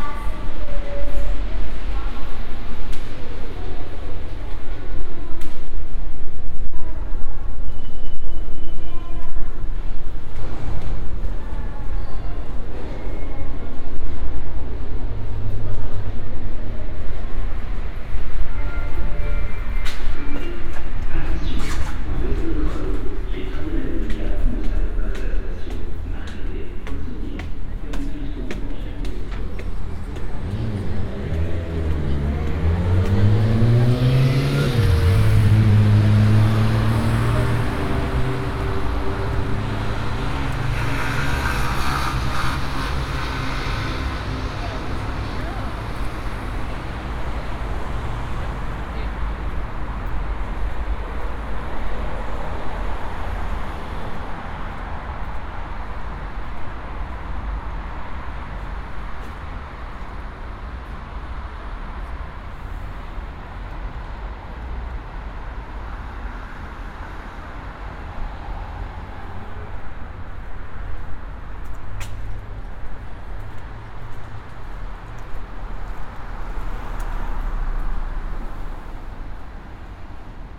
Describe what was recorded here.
Binaural recording of a walk through the underground passage below Boulevard Montparnasse. Recorded with Soundman OKM on Sony PCM D100